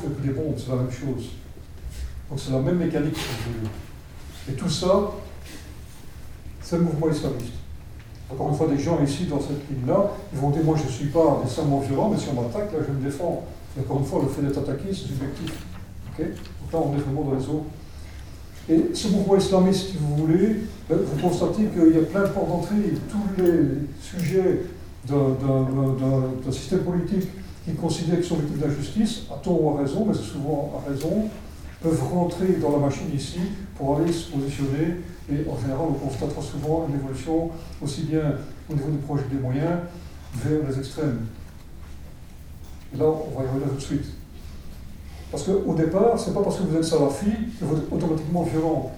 {"title": "Wavre, Belgique - Conference", "date": "2017-01-25 10:45:00", "description": "A conference about radicalism, made by Alain Grignard, an excellent islamologist. During a very too short hour, he explains how people could dive in a radicalism way of thinking. Recorded in the Governor's institution in Wavre.", "latitude": "50.72", "longitude": "4.61", "altitude": "53", "timezone": "GMT+1"}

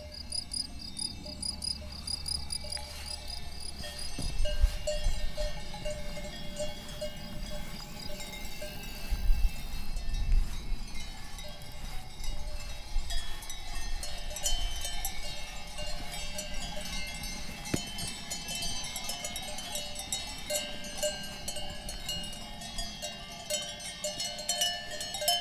{"title": "Chleiniweid/ kleine Weide", "date": "2011-06-12 13:30:00", "description": "Kuhweide Chleiniweid, Kuhglocken, Sonntagswandersocken", "latitude": "47.39", "longitude": "7.64", "altitude": "936", "timezone": "Europe/Zurich"}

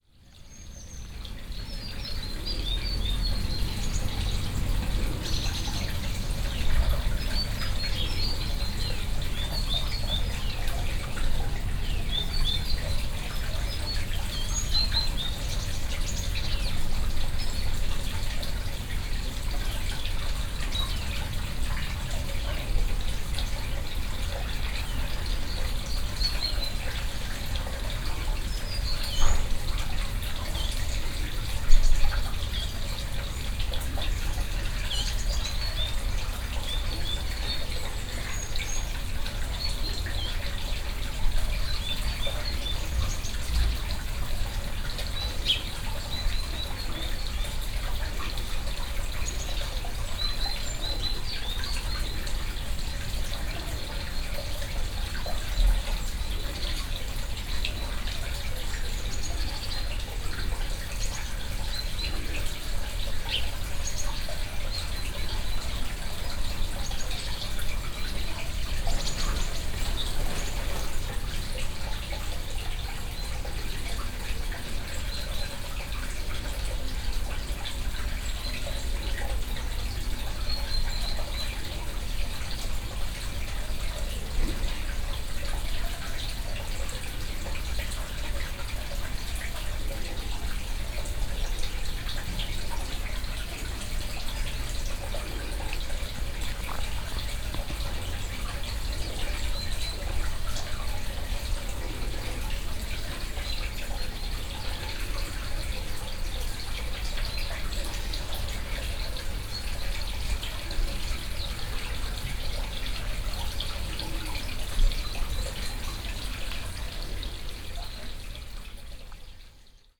{"title": "Rheinfelden, back of the house - porch", "date": "2014-09-09 08:13:00", "description": "(binaural) ambience on the porch.", "latitude": "47.58", "longitude": "7.77", "altitude": "291", "timezone": "Europe/Berlin"}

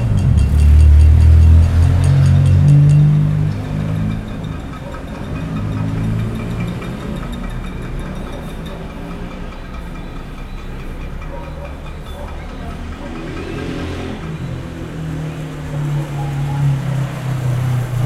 {"title": "R. Paulo Orozimbo - Cambuci, São Paulo - SP, 01535-000, Brazil - Panelaço (Pot-banging protest) - Fora Bolsonaro! - 20h", "date": "2020-03-18 20:00:00", "description": "Panelaço contra o presidente Jair Bolsonaro. Gravado com Zoom H4N - microfones internos - 90º XY.\nPot-banging protests against president Jair Bolsonaro. Recorded with Zoom H4N - built-in mics - 90º XY.", "latitude": "-23.57", "longitude": "-46.62", "altitude": "767", "timezone": "America/Sao_Paulo"}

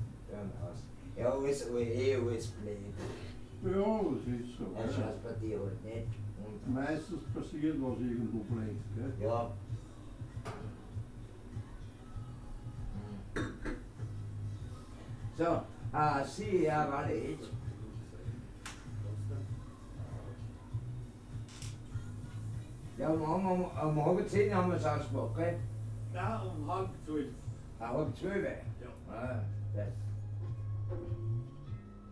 {"title": "Alt-Urfahr, Linz, Österreich - sonnenstein-buffet", "date": "2015-01-02 22:11:00", "description": "sonnenstein-buffet, linz-urfahr", "latitude": "48.31", "longitude": "14.28", "altitude": "266", "timezone": "Europe/Vienna"}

